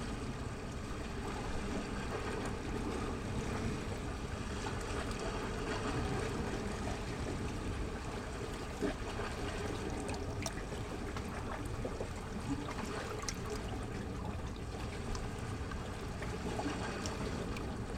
small microphones amongst the stones and rocks at the sea